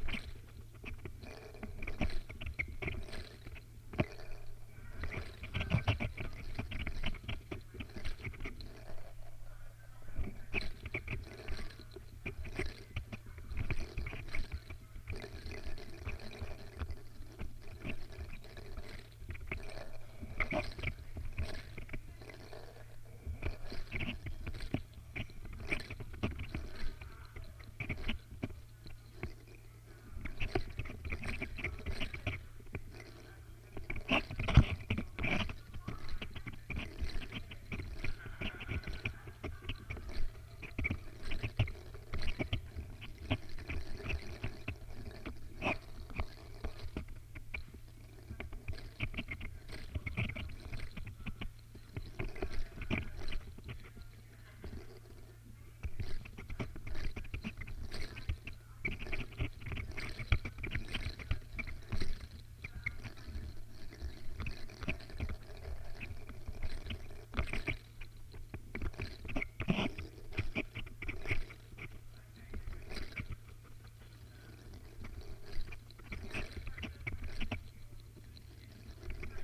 The Old Fire Station, Oxford, Oxfordshire, UK - Sonic Spindling at 'Playground on Fire'
This is the sound of my sonic spindle spinning yarn, as recorded through my little peavey mixing desk, and 'collected' by my Jez Riley French contact microphones. To explain a bit the context: 'Playground on Fire' was an event co-ordinated by my good comrade Stavroula Kounadea, which involved many artists taking over the Old Fire Station in Oxford for a day, to present myriad performances of all kinds in a day long ART extravaganza. I took this opportunity to develop the 'sonic spindling' concept which I developed in 2012, and set about turning a landing area by the stairs into a spindling performance area. My sonic spindle is a support spindle (i.e. it spins while balancing on a surface) which I made out of a double-pointed needle, some epoxy putty, and a selection of bells. I spin this device like a little spinning top inside a wooden bowl, to which I attach some contact microphones with blu-tack.